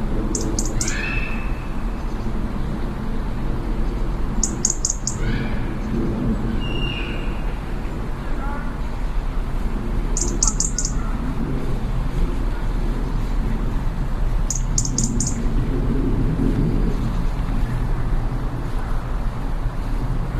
outside John Hansard Gallery, Southampton - outside John Hansard Gallery
Salisbury Rd, Southampton, UK, 3 January 2012